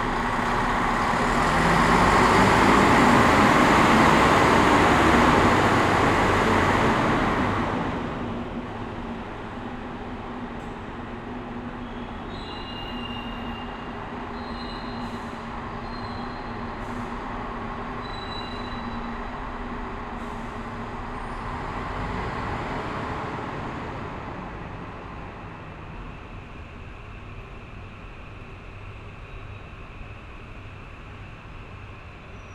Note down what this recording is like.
Trieste bus termial near main station, a bus is leaving the terminal, buzz of electrical devices and aircon. (SD702, AT BP4025)